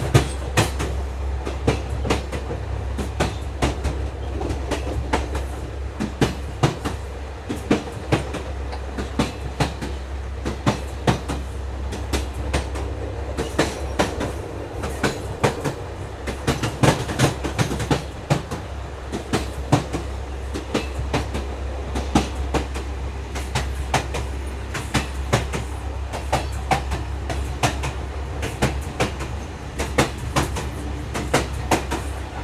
Al Bab Al Gadid WA Mansha, Qism Moharram Bek, Alexandria Governorate, Égypte - Départ intérieur train